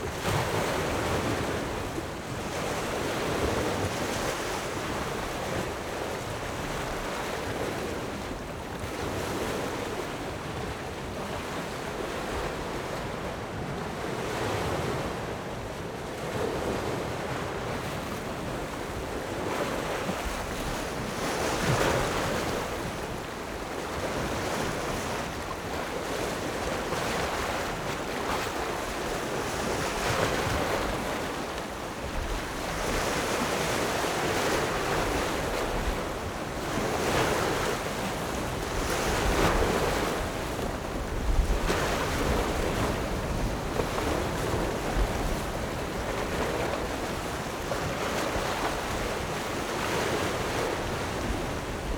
井垵海堤, Magong City - the waves
On the coast, Clipping block
Zoom H6 + Rode NT4